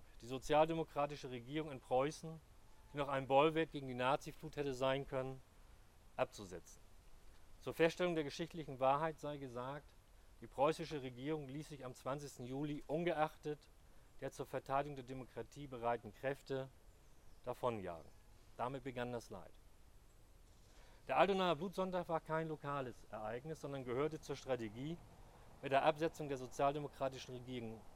Rede von Olaf Harms, Mitglied der Fraktion Die Linke, Bezirksversammlung Hamburg-Mitte, Vorsitzender der DKP
Hamburg, October 2009